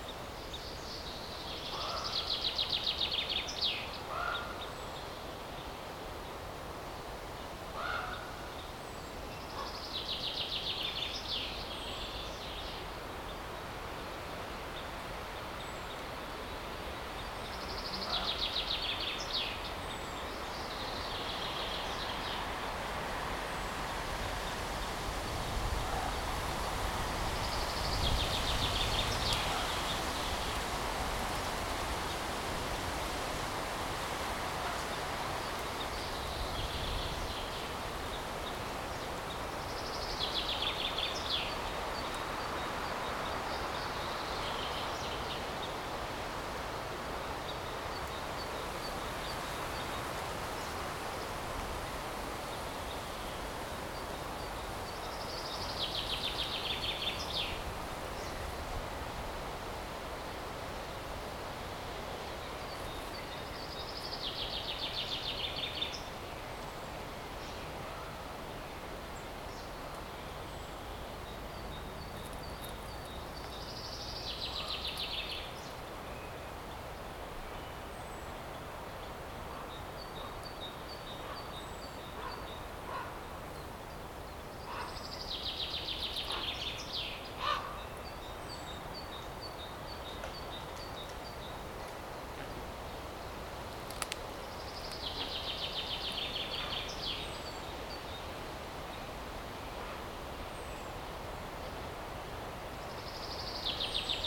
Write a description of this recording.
Soundscape from the bottom of the dry stream. Wind is blowing, birds are singing and screaming, rare fly is buzzing... Some distant sounds like cars and train can be heard. Recorded with Zoom H2n, surround 2ch mode